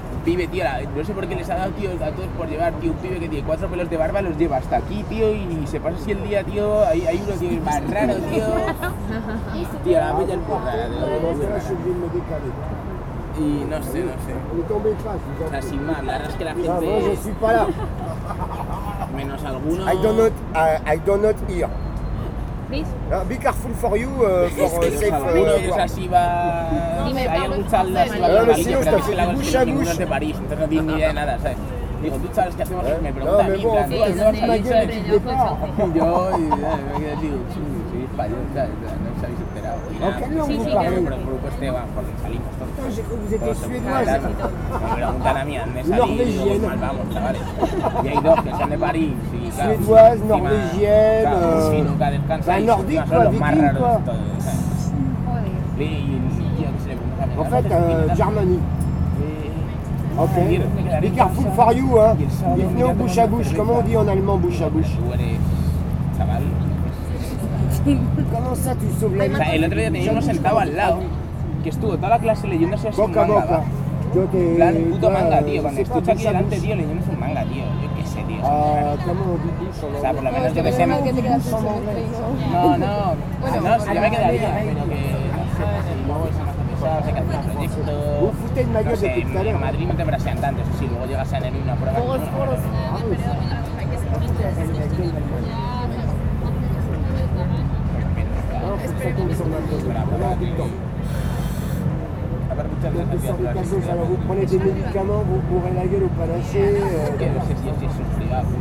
Square du Vert-Galant, Paris, France - Tourists and sun
Spanish tourist are drinking beers and enjoy the sun. A tramp is dredging german girls.